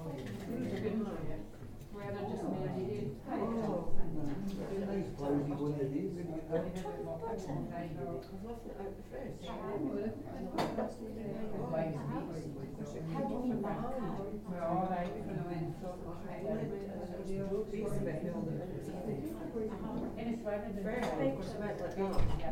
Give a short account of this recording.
We were wandering along the High Street in Hawick, wondering where and what to eat, and lingering by a sign for "The Pickled Orange". A passerby announced that this was a great place to eat; that everything is freshly cooked; good homemade food etc. so we followed up on her recommendation for a light lunch there and headed down a narrow alley to a doorway. It was a lovely place, quite tucked away and dark, and the food was indeed very nice. However, the place was also nice for its lack of music, and for its cosy acoustic. All the other tables were taken up with ladies, lunching. The music of Scottish women talking together in a small, low-ceiling space was a nice accompaniment to lunch and I recorded the sound because it is so rare to find a place to lunch where there is no background music, and where all you can hear is the nice sound of people enjoying one another's company. EDIROL R-09 inbuilt mics for this one, another super simple recording set up.